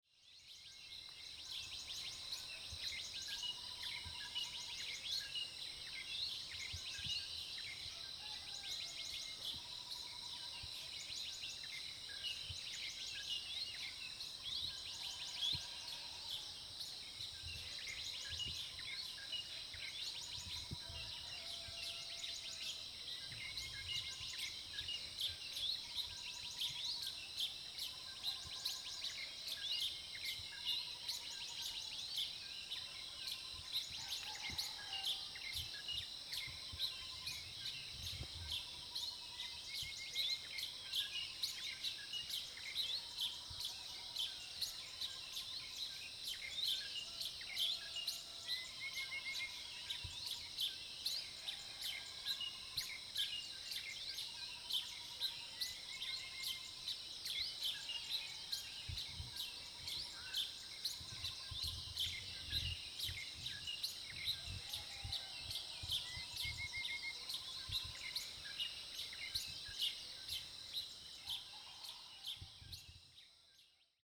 種瓜路4-2號, Puli Township - Birdsong
Crowing sounds, Bird calls, Early morning
Zoom H2n MS+XY
Puli Township, Nantou County, Taiwan, 11 June 2015